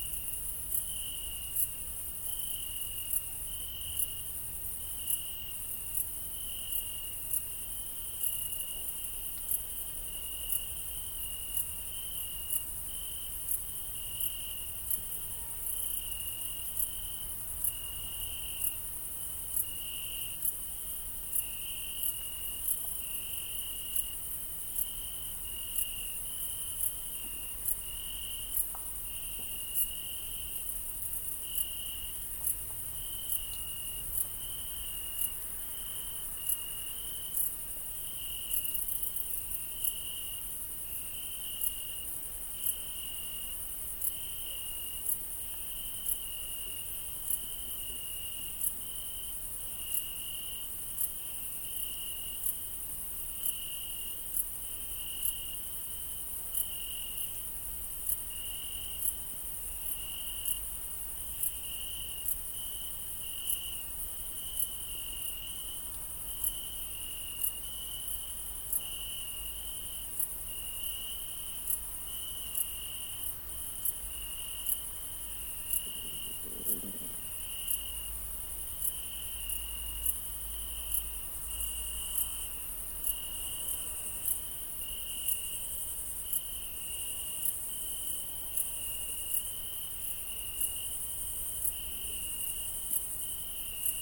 Ellend, Hangfarm, Magyarország - Crickets of the nightfall
High-pitched crickets over mass of crickets sound in a small forest. One can listen to them only on the end of the day.
Dél-Dunántúl, Dunántúl, Magyarország, 6 September 2019